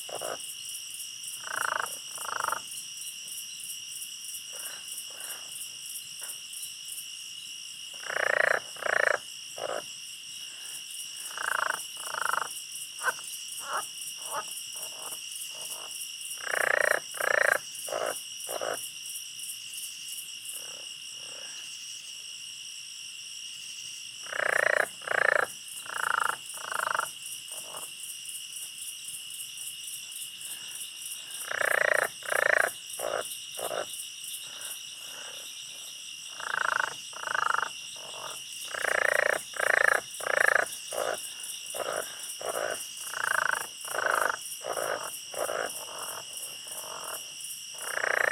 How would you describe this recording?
Recorded with a pair of DPA 4060s and a Marantz PMD661